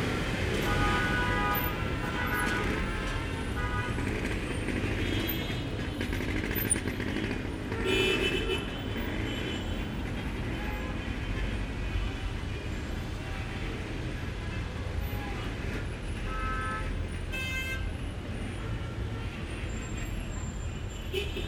Recorded on may 1st 2012 about 1.30pm. Sitting in a cab within traffic jam. Honking, motorcycle driving between the cars, running engine of the cab. Zoom H4N internal mircrophones.